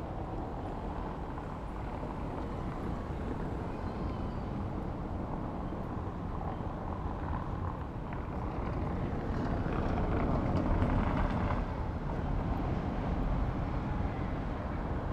Berlin: Vermessungspunkt Friedel- / Pflügerstraße - Klangvermessung Kreuzkölln ::: 18.07.2011 ::: 18:47
Berlin, Germany, 18 July 2011, ~19:00